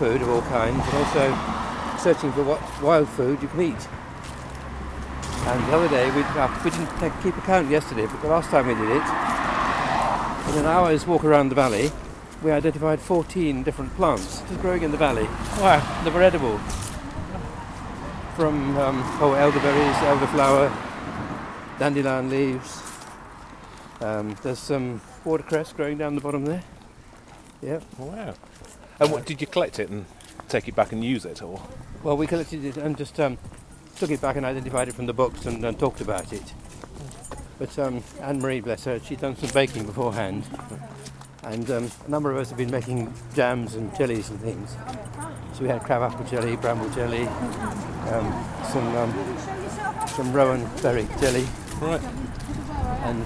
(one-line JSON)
{"title": "Walk Three: Wild food walk", "date": "2010-10-04 16:01:00", "latitude": "50.39", "longitude": "-4.10", "altitude": "67", "timezone": "Europe/London"}